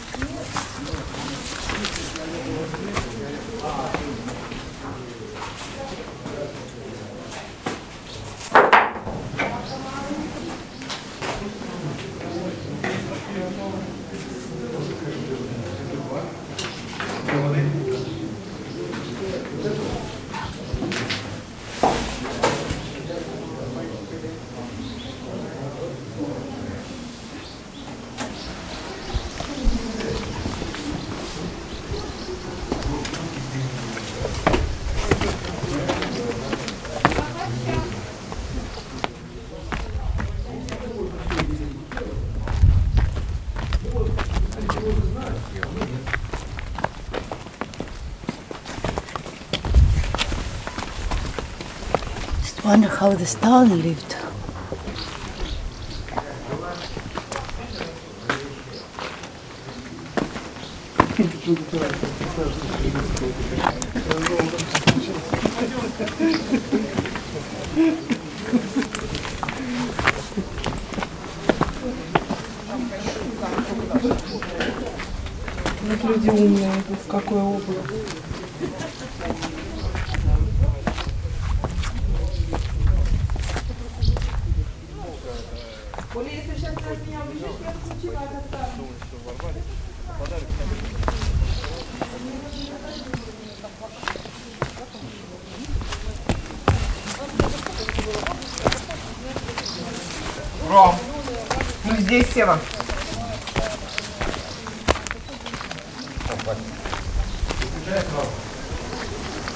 Chufut-Kale, cavetown, Bahkchsysaray, Crimea, Ukraine - In-& outside a 3-floor-cavelandscape
Chufut-Kale is one of 3 cavetowns in the area of 10kms around Bahkchsysaray, one of the last remaining settlements of Crimean Tatars, the local muslim minority.
The history of the cavetowns goes back into the 6th century, Byzanthine time, but no definite history is agreed on, even wikipedia the information on site diverge. It seems certain, that fron the 10th century on, the place was mainly populated by Alans, the most powerful Sarmantian tribes of Iranian decent, that adopted Christianity. The Tatar horde of Emir Nogai took over Bakhchsysaray in 1299, and at the turn of the 15th century Tatars settled Karaite (a Jewish Sekte) craftsmen in front of the eastern line of fortifications. The significance of Kyrk-Or, the original name, as a stronghold declined, and the Crimean Khan, Menglis-Girei, moved his capital to Bakhchsysaray. The old town remained a citadel of Bakhchsysaray and a place of incarceration for aristocratic prisoners. In the mid-17th century Tatars left Kyrk-Or.
16 July, 17:35